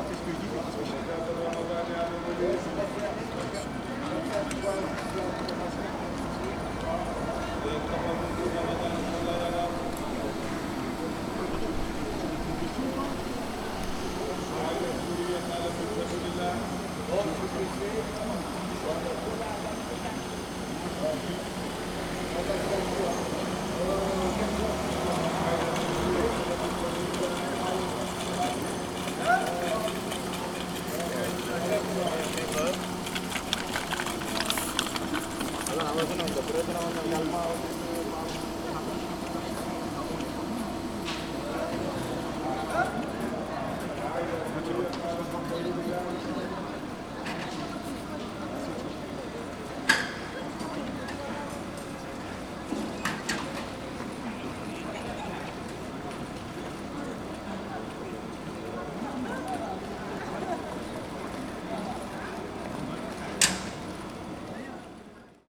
Rue Auguste Blanqui, Saint-Denis, France - Place de la Halle C&A
This recording is one of a series of recording mapping the changing soundscape of Saint-Denis (Recorded with the internal microphones of a Tascam DR-40).